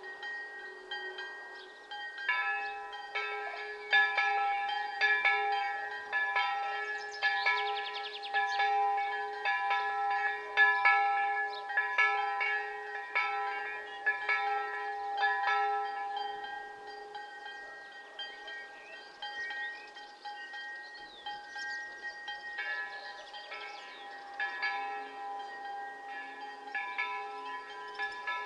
Stalos, Crete, soundscape with churchbells
Stalos, Greece, 8 May, ~10:00